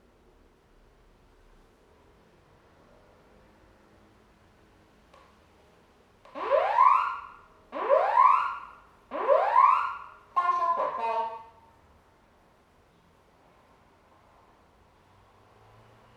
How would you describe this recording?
Check and test fire alarm system, Zoom H2n